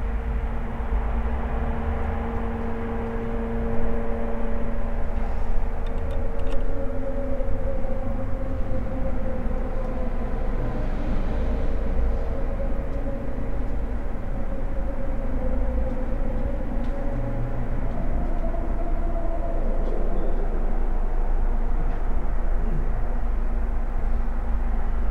from/behind window, Mladinska, Maribor, Slovenia - at night
chopper monitoring football match, fans shouts